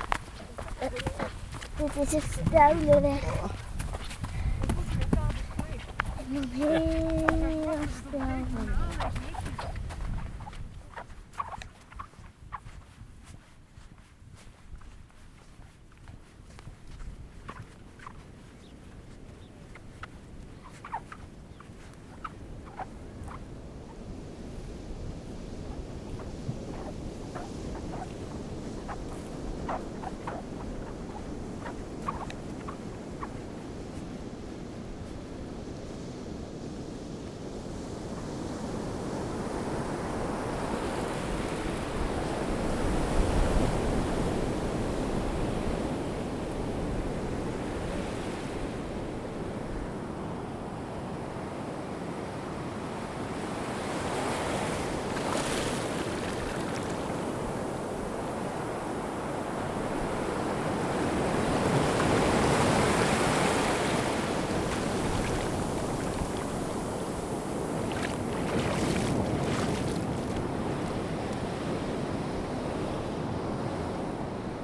Westerslag, Den Burg, Netherlands - Soundscape Texel for World Listening Day 2020 #WLD
Soundscape of my visit to the island Texel made for World Listening Day, July 18th 2020
As many people I spend this summer holiday within the borders of my own country, rediscovering the Dutch landscape.